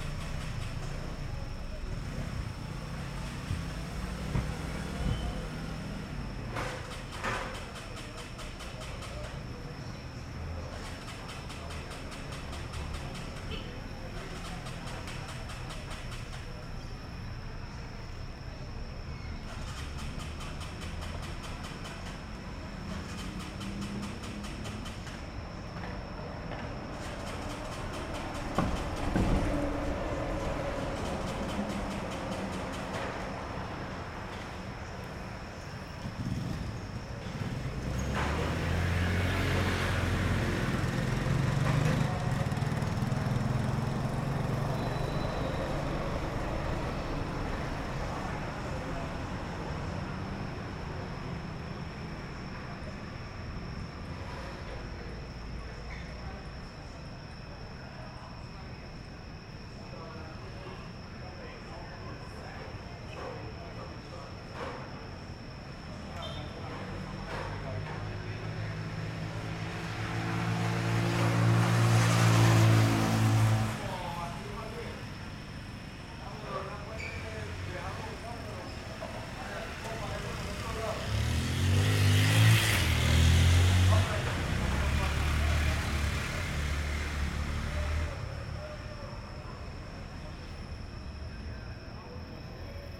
{"title": "Cra., Medellín, Belén, Medellín, Antioquia, Colombia - Iglesia de Los Alpes", "date": "2022-09-05 11:50:00", "description": "Toma de sonido / Paisaje sonoro de la parte lateral de la iglesia de Los Alpes a media noche, grabada con la grabadora Zoom H6 y el micrófono XY a 120° de apertura. Se puede apreciar al inicio de la grabación el intento de una persona de encender su motocicleta, el paso del metroplus y el pasar de las motocicletas por el lugar.\nGrabado por: Andrés Mauricio Escobar\nSonido tónico: Naturaleza, grillos\nSeñal sonora: Motocicleta encendiendo y pasando.", "latitude": "6.23", "longitude": "-75.61", "altitude": "1551", "timezone": "America/Bogota"}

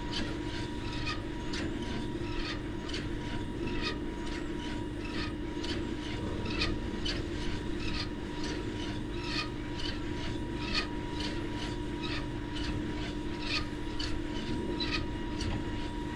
{"title": "Luttons, UK - wind turbine ...", "date": "2017-01-09 08:21:00", "description": "wind turbine ... lavalier mics in a parabolic ...", "latitude": "54.13", "longitude": "-0.55", "altitude": "102", "timezone": "GMT+1"}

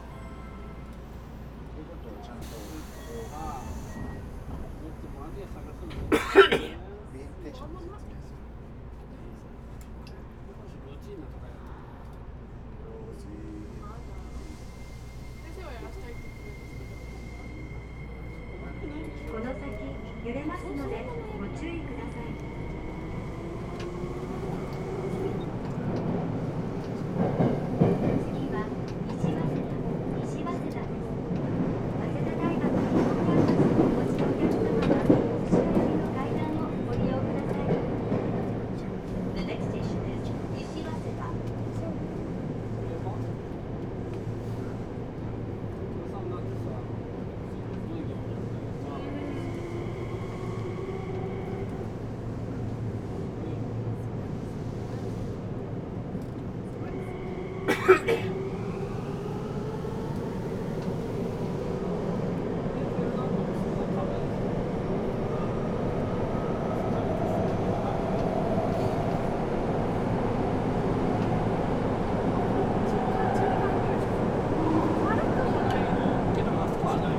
{"title": "Tokyo, north from Shibuya - subway ride 3 station", "date": "2013-04-28 17:25:00", "description": "subway ride from Kitasando to Nishiwaseda station.", "latitude": "35.70", "longitude": "139.71", "altitude": "42", "timezone": "Asia/Tokyo"}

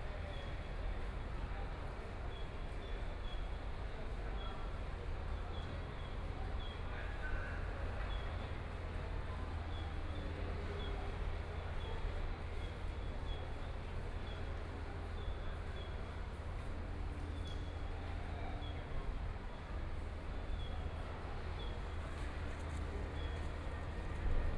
{"title": "The Affiliated Senior High School of National Taiwan Normal University - Class time", "date": "2014-01-10 15:09:00", "description": "Class time, Binaural recordings, Zoom H4n+ Soundman OKM II", "latitude": "25.03", "longitude": "121.54", "altitude": "7", "timezone": "Asia/Taipei"}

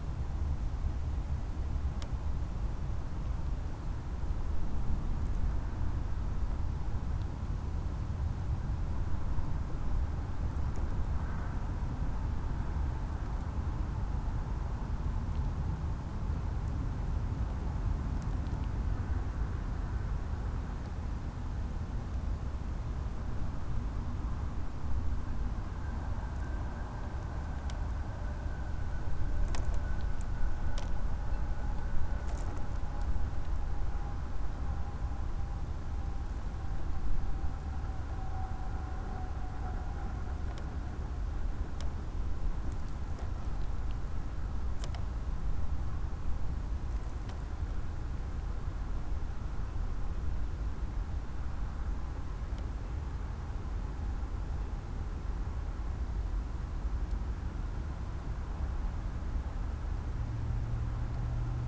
{"title": "berlin: mergenthalerring - A100 - bauabschnitt 16 / federal motorway 100 - construction section 16: abandonned allotment", "date": "2014-01-22 17:20:00", "description": "sizzling noise of a reed screen fence, local trains and the distant snow absorbed drone of traffic\njanuary 2014", "latitude": "52.48", "longitude": "13.46", "timezone": "Europe/Berlin"}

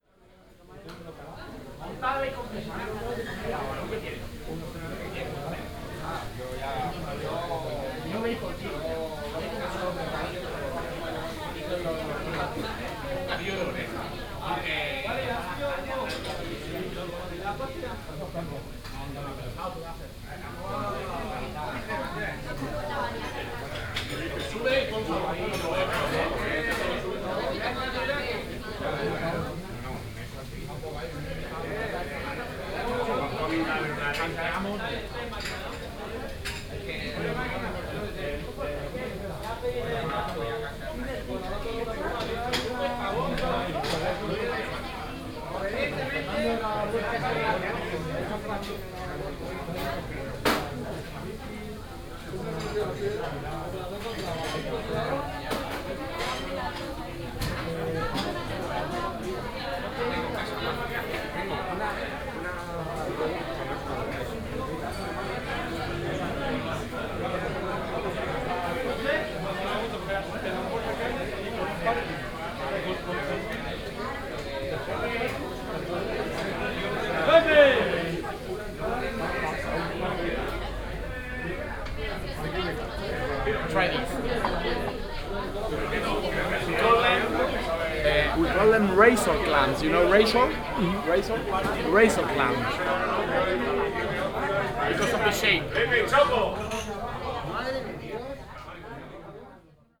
{"title": "Madrid, Bar Cruz - razor clams", "date": "2014-11-30 17:45:00", "description": "waiting for order in bar Cruz. visited the place a bit too late as according to the waiter they were packed about 1 hour earlier and the place was bustling with sounds of shouting cooks and waiters, people ordering food and a real hullabaloo. still quite rich ambience of a local spanish bar.", "latitude": "40.41", "longitude": "-3.71", "altitude": "661", "timezone": "Europe/Madrid"}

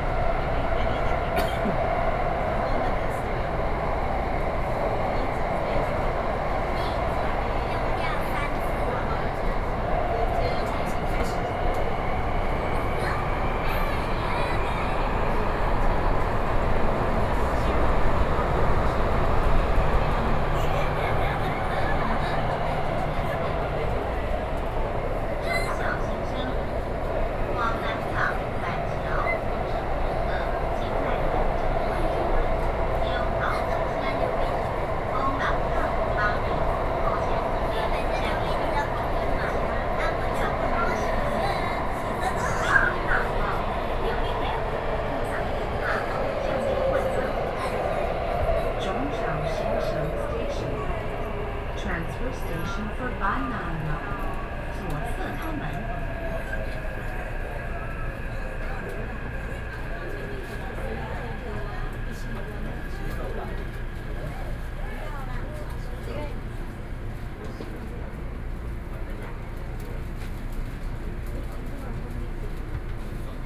{"title": "Orange Line (Taipei Metro) - Mother and child", "date": "2013-07-16 18:23:00", "description": "from Zhongshan Elementary School Station to Zhongxiao Xinsheng Station, Sony PCM D50 + Soundman OKM II", "latitude": "25.06", "longitude": "121.53", "altitude": "11", "timezone": "Asia/Taipei"}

